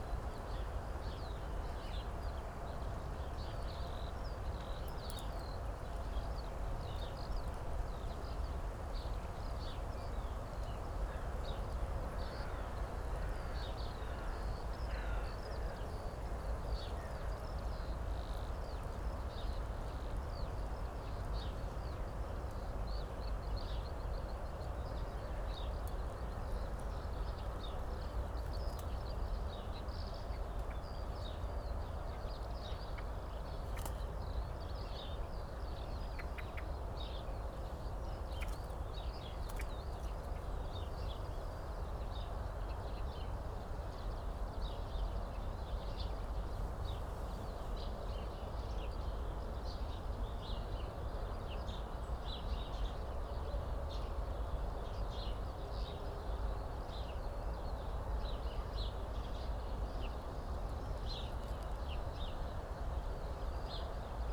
Tempelhofer Feld, Berlin, Deutschland - spring morning
place revisited on a spring morning, birds, noise from the autobahn A100, a bit of wind
(SD702, DPA4060)
Berlin, Germany, 3 April 2020